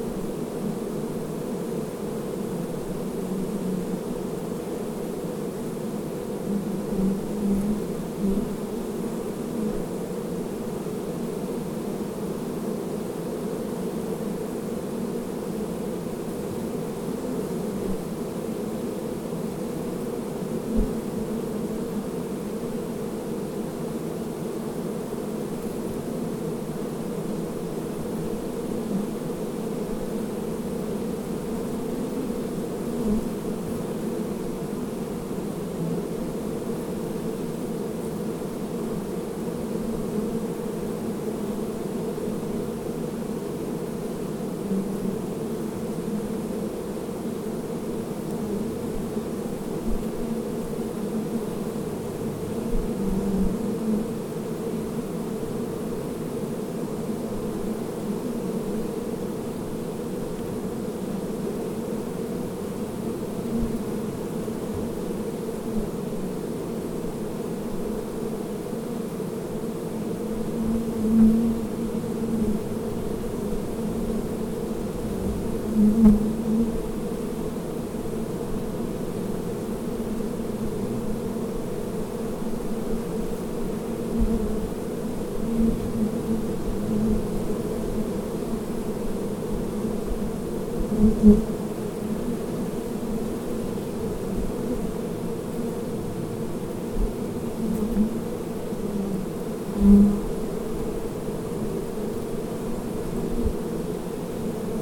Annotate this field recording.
Bees/Vajkard/International Workshop of Art and Design/Zoom h4n